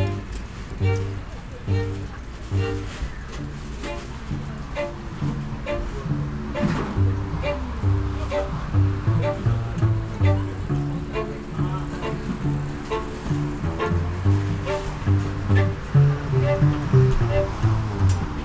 food stand, Columbiadamm, Berlin, Deutschland - ambience with musicians
noisy corner at Columbiadamm, Neukölln, Berlin, entrance to the Tempelhof airfield, newly opened korean quality fast food stand, musicians playing, pedestrians, bikes and cars on a busy Sunday afternoon.
(Sony PCM D50, DPA4060)